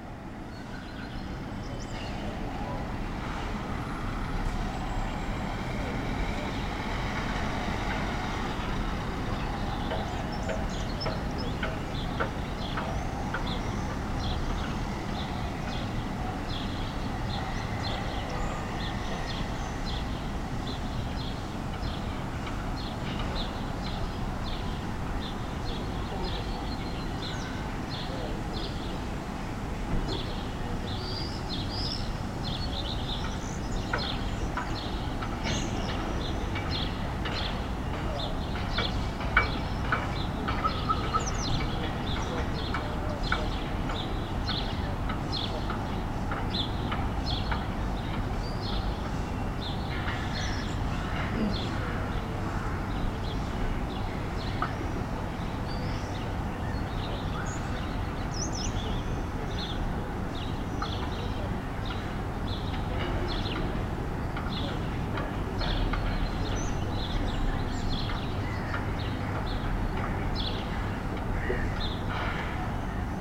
{"title": "Kaliningrad, Russia, from hotel window", "date": "2019-06-07 08:45:00", "description": "morning. listening through hotel window", "latitude": "54.71", "longitude": "20.51", "altitude": "17", "timezone": "Europe/Kaliningrad"}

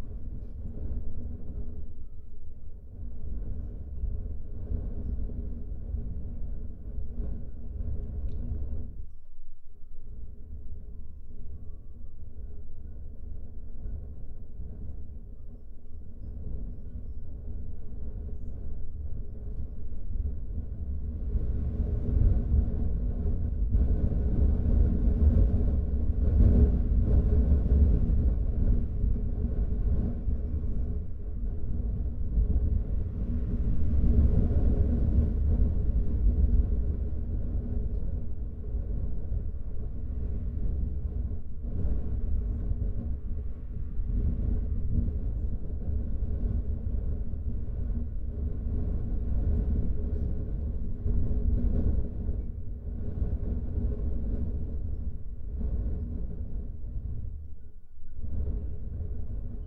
{"title": "Patmos, Liginou, Griechenland - Wind im Kamin", "date": "2004-10-05 16:35:00", "description": "Im Haus. Draussen geht der Meltemi.", "latitude": "37.35", "longitude": "26.58", "altitude": "23", "timezone": "Europe/Athens"}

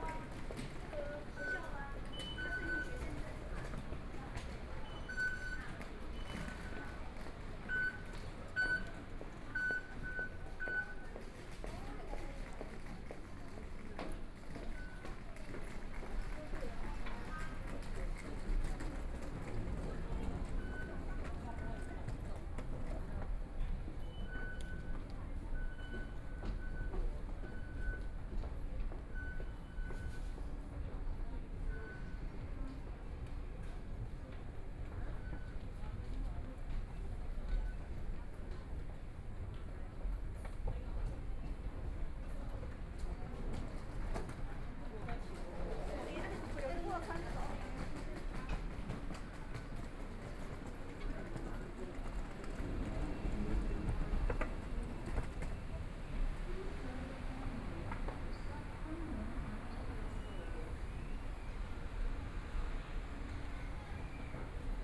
{"title": "Zhongshan Junior High School Station, Taipei - walking in the Station", "date": "2014-02-08 13:07:00", "description": "walking in the Station, Environmental sounds, Traffic Sound, Binaural recordings, Zoom H4n+ Soundman OKM II", "latitude": "25.06", "longitude": "121.54", "timezone": "GMT+1"}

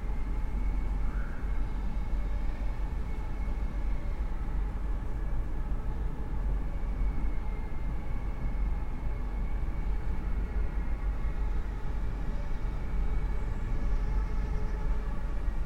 {"title": "Berlin Bürknerstr., backyard window - winter morning in a Berlin backyard", "date": "2020-02-05 10:30:00", "description": "(Raspberry PI, ZeroCodec, Primo EM172)", "latitude": "52.49", "longitude": "13.42", "altitude": "45", "timezone": "Europe/Berlin"}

